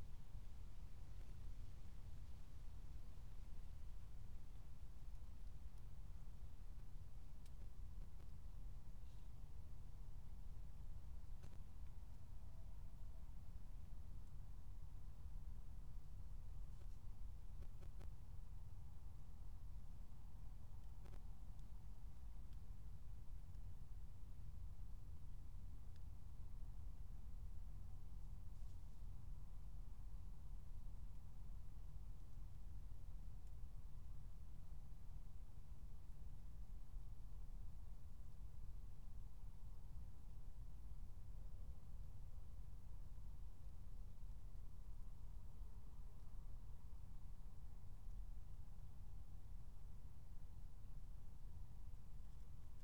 Berlin, Tempelhofer Feld - former shooting range, ambience
02:00 Berlin, Tempelhofer Feld
Deutschland